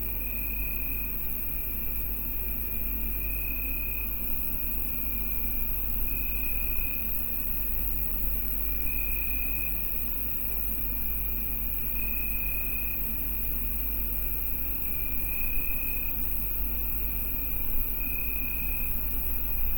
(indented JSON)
{
  "title": "Sveti Ivan Dol, Buzet, Chorwacja - evening near brewary",
  "date": "2021-09-07 22:00:00",
  "description": "evening ambience on a porch of a small house located near a brewery. the constant high pitched sound is sound of the brewery complex. (roland r-07)",
  "latitude": "45.40",
  "longitude": "13.97",
  "altitude": "45",
  "timezone": "Europe/Zagreb"
}